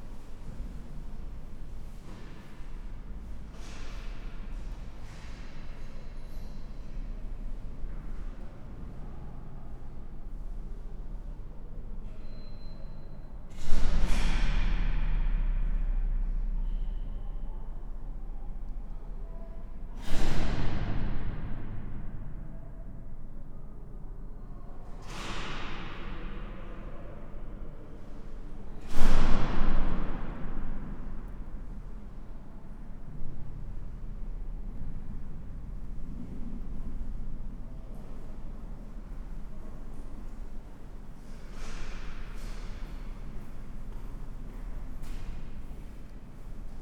Poznan, Krolowej Jadwigi street, Maraton building - staircase
big, concrete staircase in the Maraton office building. workers going up the stairs. big, fireproof doors slamming on various floors causing immense reverberations. (roland r-07)
województwo wielkopolskie, Polska, 17 December 2019